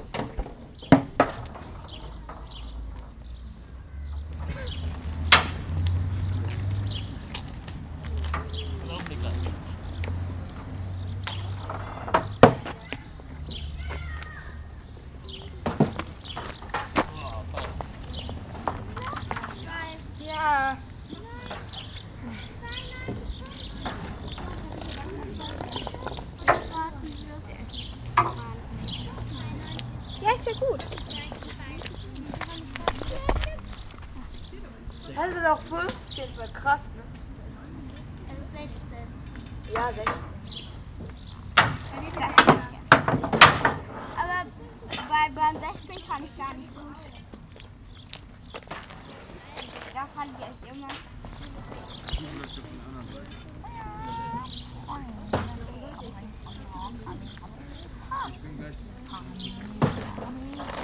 Bleialf, Deutschland - KInder spielen Minigolf / Children playing mini golf
Im Hintergarten eines Restaurants spielen Kinder Minigolf; Stimmen, Abschläge, rollende Bälle, Vögel.
In the back garden of a restaurant, children playing mini golf; Voices, tee offs, bowling balls, birds.
Bleialf, Germany, 15 July